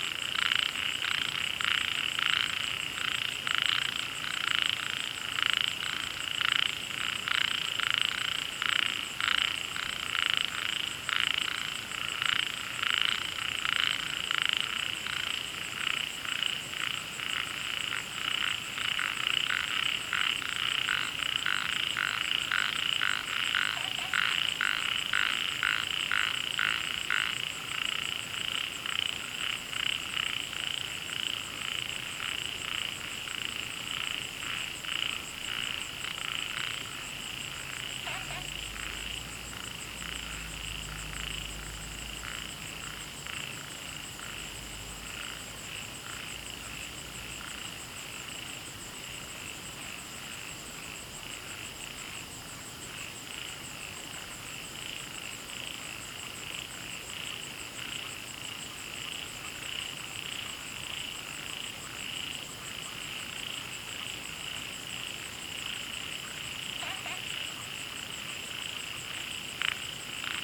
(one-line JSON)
{"title": "茅埔坑溼地公園, 桃米里, Taiwan - Frogs chirping", "date": "2015-08-11 19:41:00", "description": "Frogs chirping, Wetland\nZoom H2n MS+XY", "latitude": "23.94", "longitude": "120.94", "altitude": "470", "timezone": "Asia/Taipei"}